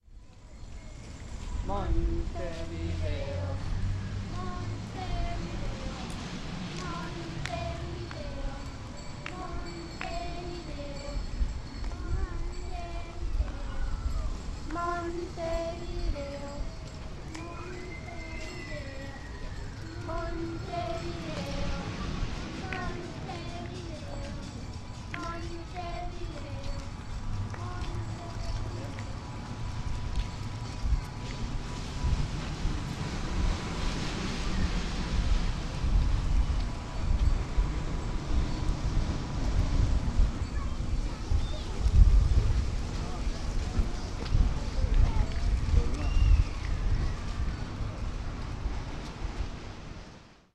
after a long day in the amusement park some children and grown-ups walk home singing
Montevideo, Uruguay